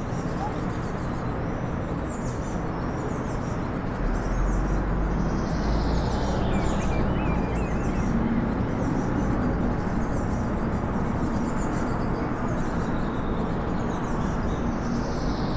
6am traffic noise from Toompea, Tallinn
Tallinn traffic noise even at 6am in the summer